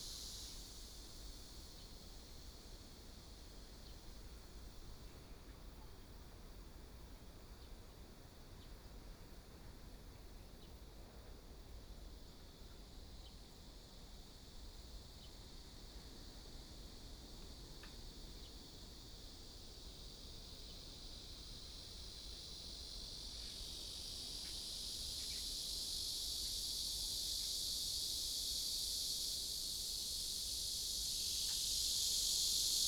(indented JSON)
{
  "title": "壯圍鄉東港村, Yilan County - In windbreaks",
  "date": "2014-07-26 13:41:00",
  "description": "In windbreaks, Near the sea, Cicadas sound, Birdsong sound, Small village\nSony PCM D50+ Soundman OKM II",
  "latitude": "24.72",
  "longitude": "121.83",
  "altitude": "11",
  "timezone": "Asia/Taipei"
}